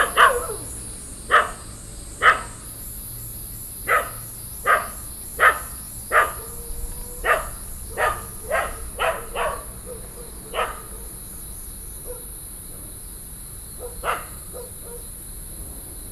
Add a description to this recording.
Walking in a small way, traffic sound, Cicada sounds, Dogs barking, birds, Sony PCM D50+ Soundman OKM II